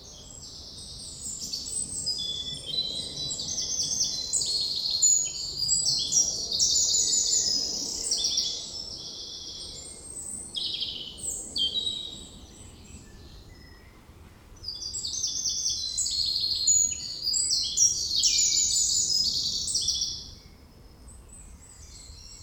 Mont-Saint-Guibert, Belgique - In the woods
Recording of the birds singing in the forest, on a spring sunday morning. It's not exactly a forest but a small wood in a quite big city, so there's a lot of distant noises : trains, cars, planes. I listed, with french name and english name (perhaps others, but not sure to recognize everybody) :
Fauvette à tête noire - Eurasian Blackcap
Rouge-gorge - Common robin
Merle noir - Common blackbird
Pigeon ramier - Common Wood Pigeon
Mésange bleue - Eurasian Blue Tit
Mésange charbonnière - Great Tit
Corneille noire - Carrion Crow
Pic vert - European Green Woodpecker
Choucas des tours - Western Jackdaw